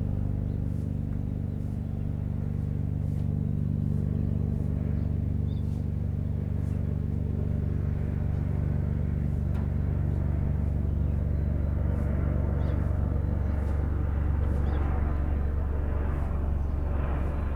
Alsace Corré, Réunion - 20140425 1126 1130 comparaison AS350B3 EC130 même trajectoire
Dans les montagnes de l'ÎLE DE LA RÉUNION, le tourisme par hélicoptère provoquant des nuisances sonores, une compagnie a fait des effort et utilise une "machine d'exception", "l'hélicoptère le plus silencieux du monde" dixit EC130B4 qui fait le même son que les EC130 au look un peu différent. Cette machine d'exception suivant justement un vieux bouzin du genre "Écureuil" des années 1990 vous pourrez apprécier ici l'incroyable réduction des nuisances sonores!!!
Sachez aussi que quand on mesure du son en dB, c'est bien souvent des dB(A), en réduisant de la mesure d'un facteur 40 (-16 dB le 100Hz) et d'un facteur 10.000 (-39dB) le 30Hz, vous comprendrez à quel point on est à coté de la plaque concernant une comparaison au sonomètre du bruit d'un hélicoptère, et d'autant plus que l'essentiel de la nuisance sont ces vibrations qui ébranlent tout!
Saint-Pierre, La Réunion, France, 25 April 2014